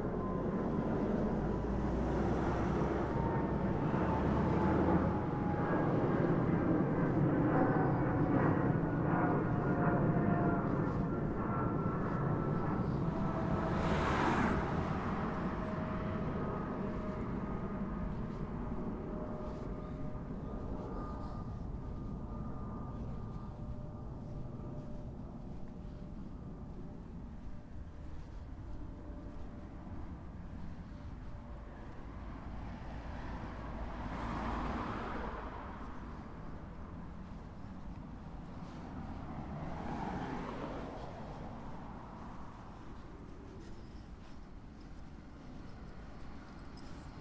airplane flyes over Ealing, London, UK - airplane flyes over